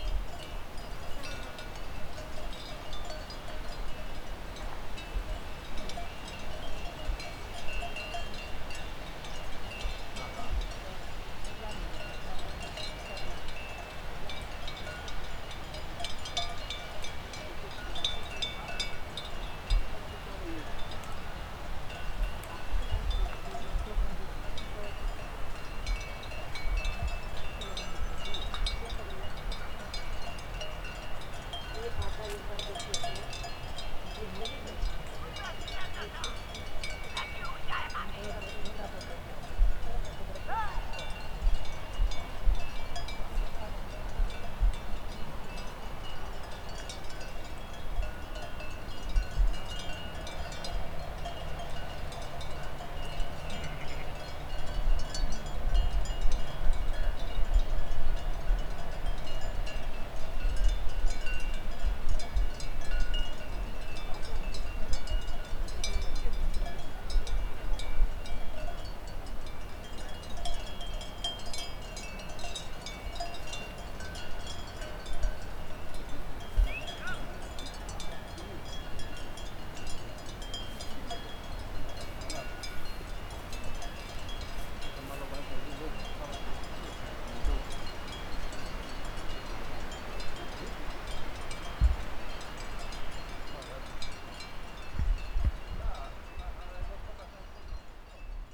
Zakopane, Pod Reglami touristic trail - sheep bells
a flock of sheep grazing, playing their bells, shepherd calling to them, tourists passing by
16 September 2011, ~13:00